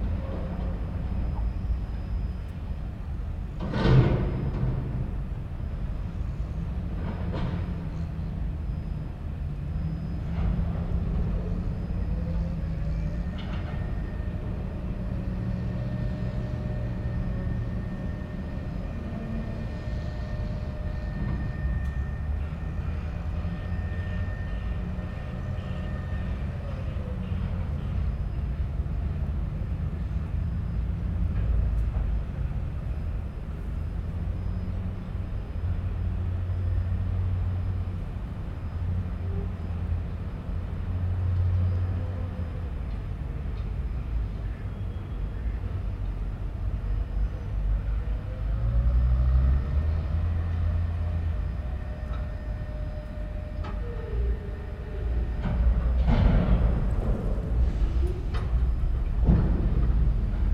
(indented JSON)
{
  "title": "Niehler Hafen, container harbour, Köln - early evening harbour ambience",
  "date": "2013-07-18 18:45:00",
  "description": "a few steps ahead\n(Sony PCM D50, DPA4060 AB60cm)",
  "latitude": "50.98",
  "longitude": "6.98",
  "altitude": "44",
  "timezone": "Europe/Berlin"
}